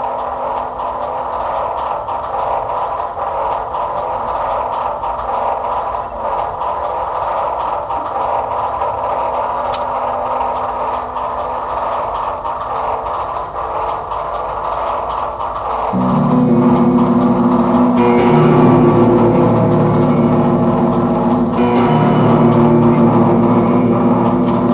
Karls-Aue, Kassel, Deutschland - Kassel, Karlsaue, temporary house, media installation
At a temporary house building made of found material by japanese artist Shinro Ohtake. The sound of a mechanic inside the building that also triggers an electric motor that scratches an amplified guitar. Also to be heard: steps on stones by visitors that walk around the building.
soundmap d - social ambiences, art places and topographic field recordings
Kassel, Germany